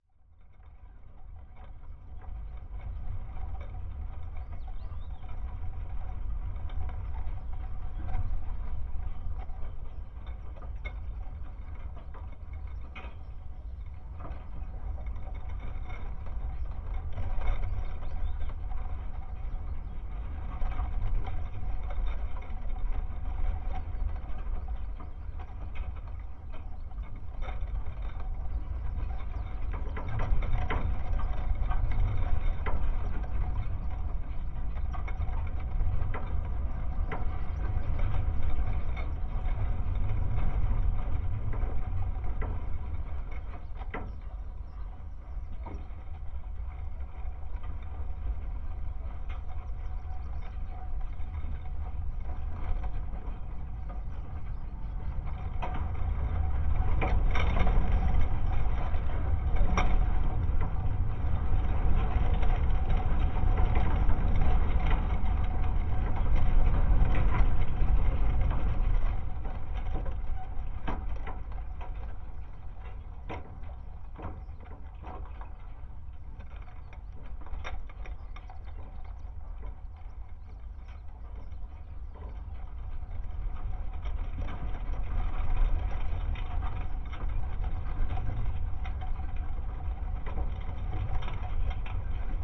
Bikuskis, Lithuania, the fence at abandoned sport yard
contact microphones on the fence surrounding abandoned sport yard
18 May 2019